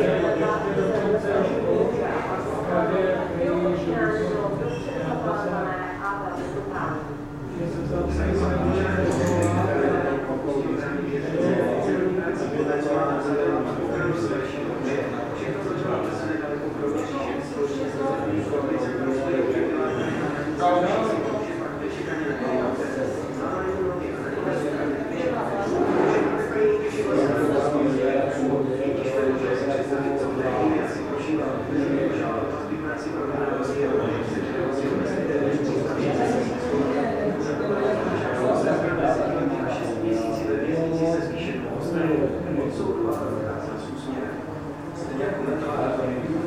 Český Krumlov, Tschechische Republik - Restaurace U Zelené Ratolesti
Český Krumlov, Tschechische Republik, Restaurace U Zelené Ratolesti, Plešivec 245, 38101 Český Krumlov
Český Krumlov, Czech Republic, 7 August